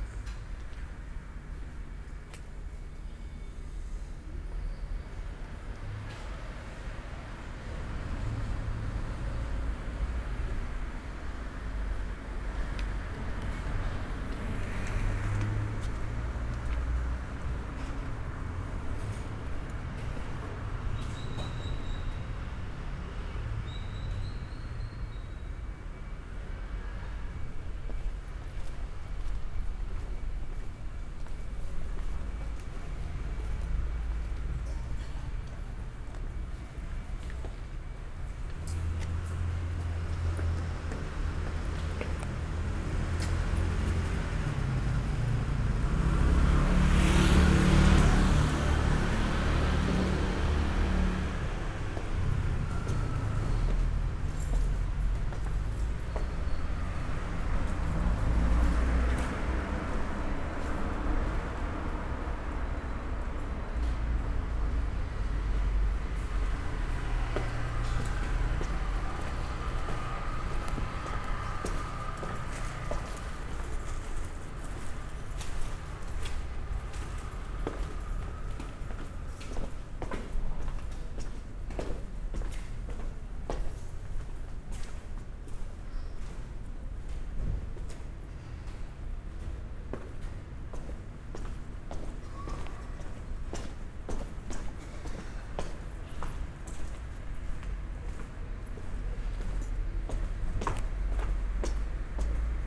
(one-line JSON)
{"title": "stairs, Via Giacomo Ciamician, Trieste, Italy - street walk", "date": "2013-09-07 15:55:00", "description": "day walk in Via Giacomo Ciamician, down the stairs into Via del Capuano\n(SD702, DPA4060)", "latitude": "45.65", "longitude": "13.77", "altitude": "34", "timezone": "Europe/Rome"}